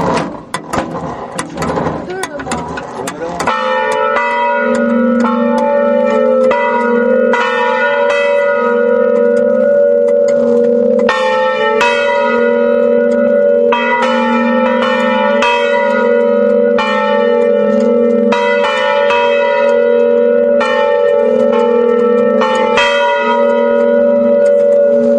après le 31 décembre cronce 01/2004
Cronce, France